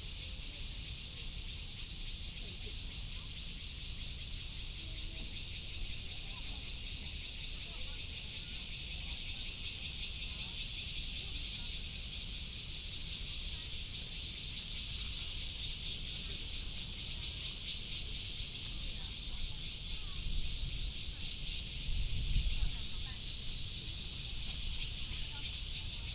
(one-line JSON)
{"title": "虎頭山環保公園, Taoyuan City - In the mountains of the park", "date": "2017-08-07 18:21:00", "description": "In the mountains of the park, Traffic sound, Cicada sound, Dog", "latitude": "25.01", "longitude": "121.33", "altitude": "222", "timezone": "Asia/Taipei"}